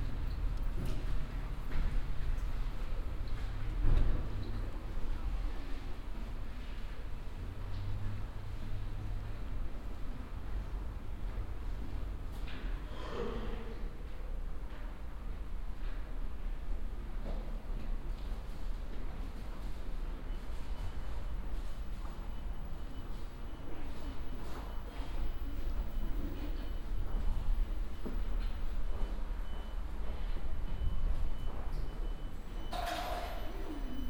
unna, main station, under the tracks in a gangway
at the main station on midday - the emptyness of the gangway underneath the tracks, a train passing by, some schoolgirls parloring
soundmap nrw - social ambiences and topographic field recordings
Nordrhein-Westfalen, Deutschland, European Union